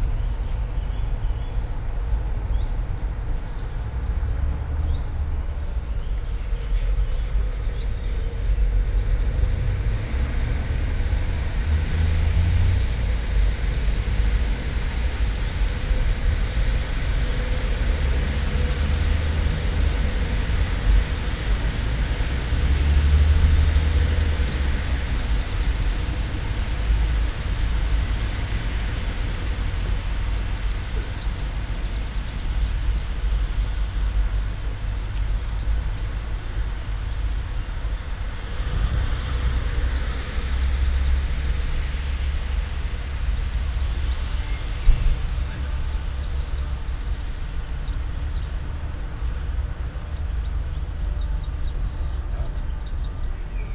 all the mornings of the ... - may 30 2013 thu